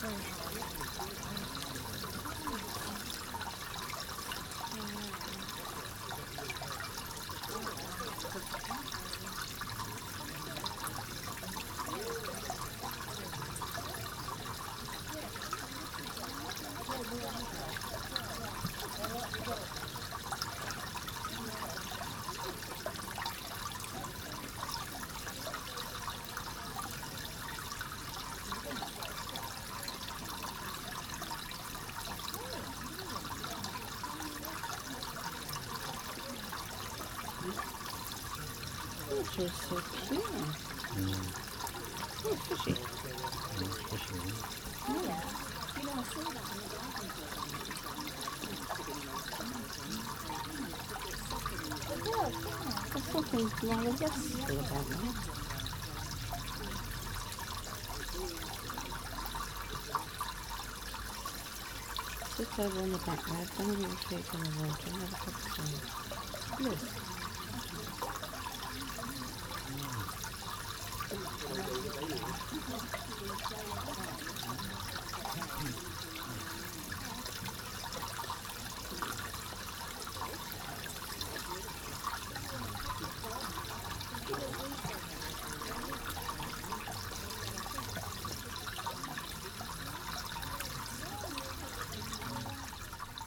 Coleton Fishacre - 2012-09-19 Coleton Fishacre, water & plane
This is from 2012. I was recording the sound of a small water feature at Coleton Fishacre when a light aircarft flew very low across the 'sound stage' from right to left.